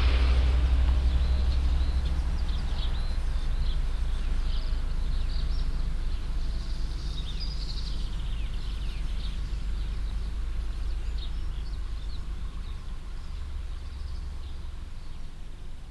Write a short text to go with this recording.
In einem, Privatgarten an einem frühen Frühlingsmorgen. Die Vögel im Wind und der Verkehr. Inside a private garden in the early mornig time in spring. The birds in the wind and the traffic. Projekt - soundmap d - topographic field recordings and social ambiences